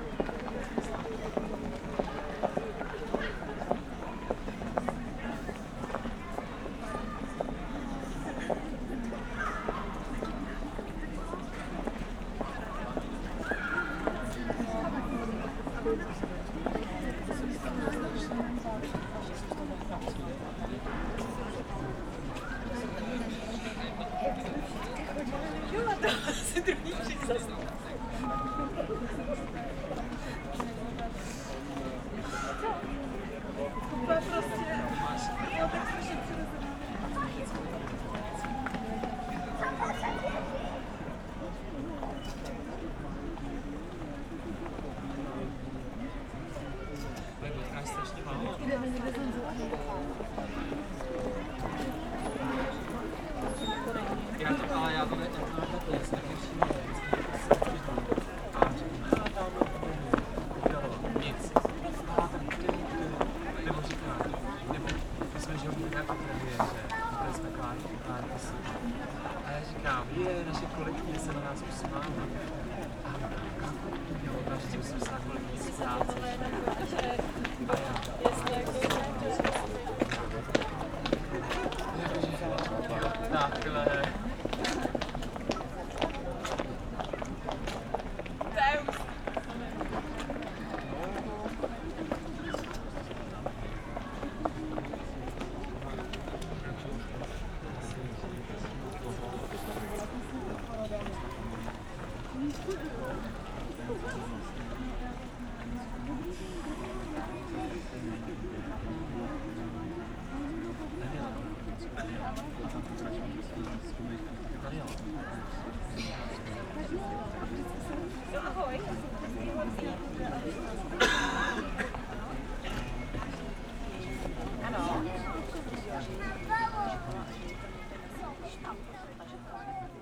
ambience in franciscan garden, old town, Prague. people seem to appreciate this lovely place. sounds of kids from the nearby kindergarden and steps from pedestrians.
(SD702, Audio Technica BP4025)
Prague-Prague, Czech Republic, 2 October 2012